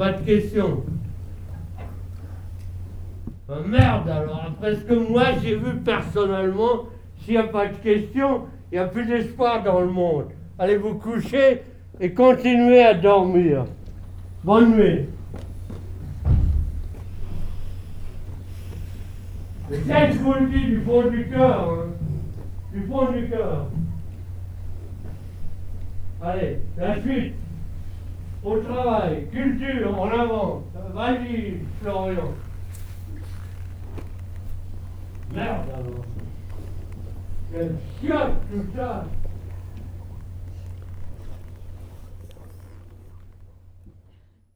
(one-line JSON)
{"title": "Salle Renoir, Projection en presence de J.M Straub", "latitude": "50.70", "longitude": "3.15", "altitude": "44", "timezone": "GMT+1"}